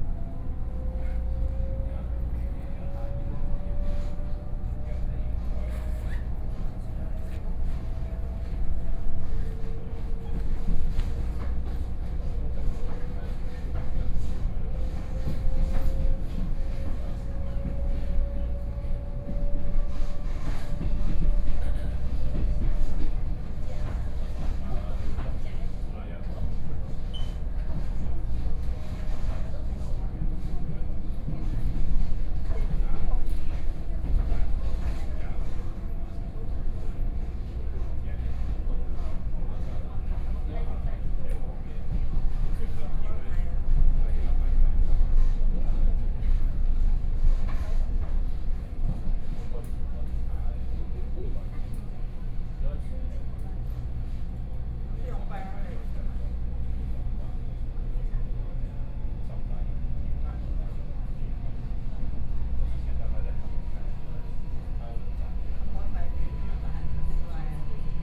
Tongluo Township, Miaoli County - Local Train
from Miaoli Station to Tongluo Station, Zoom H4n+ Soundman OKM II